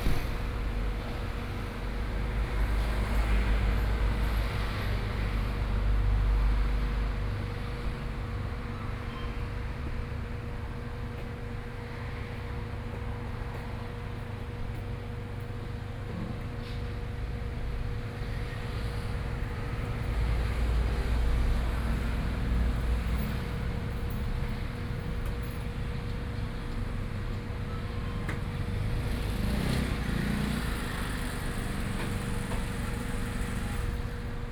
Sec., Yuanshan Rd., Yuanshan Township - Traffic Sound
Traffic Sound, At the roadside, In front of the convenience store
Sony PCM D50+ Soundman OKM II
Yilan County, Taiwan, 25 July